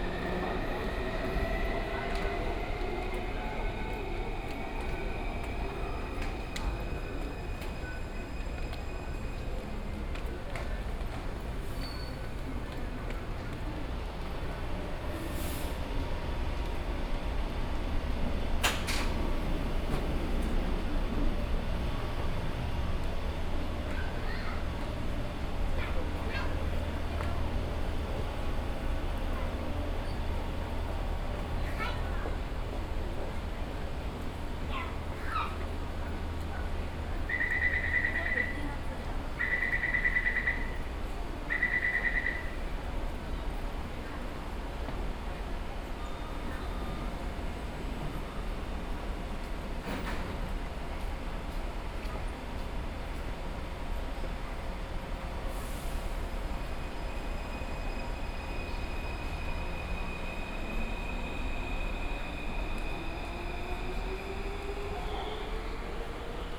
Zhongxiao Fuxing Station - soundwalk
Zhongxiao Fuxing Station, In the process of moving escalator
Binaural recordings, Sony PCM D100 + Soundman OKM II
台北市 (Taipei City), 中華民國, 3 April